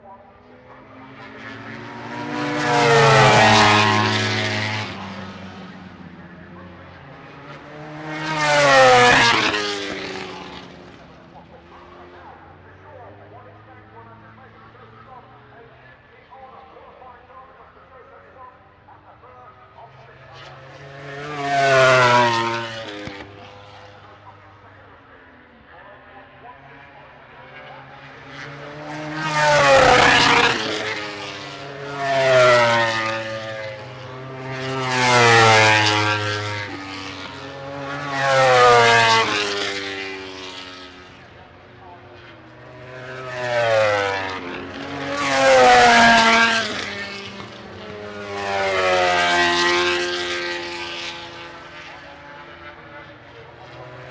Unnamed Road, Derby, UK - british motorcycle grand prix 2006 ... motogp qual ...
british motorcycle grand prix 2006 ... motogp qual ... one point stereo mic to minidisk ... some distant commentary ...